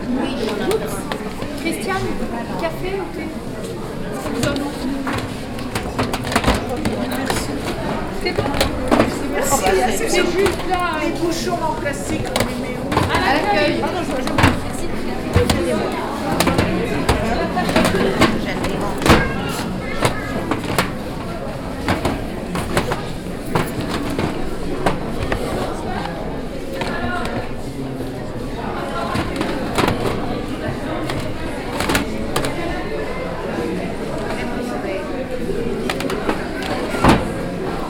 Antony, Centre André Malraux, Flea market
France, Flea market, crowd